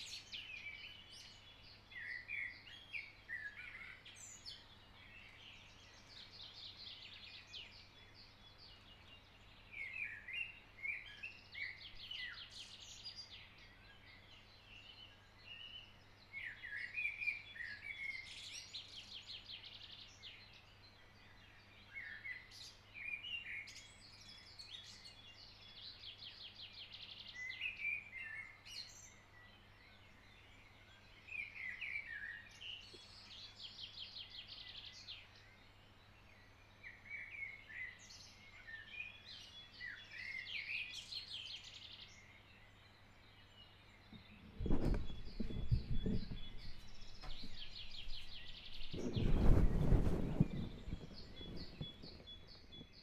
ul. "Georgi Benkovski", Ribaritsa, Bulgaria - Birds singing in Ribaritsa
Early in the morning birds are singing in the village of Ribaritsa. Recorded with a Zoom H6 with the X/Z microphone.
Ловеч, Бългaрия